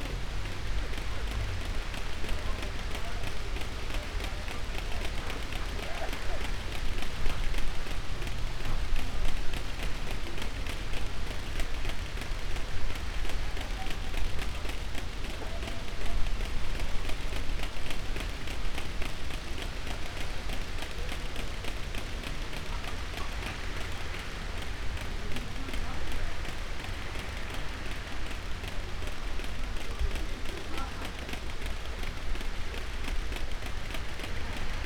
Lazzaretto Trieste, Italy - windmill
wind in plastic windmill, tree crowns, camping ambience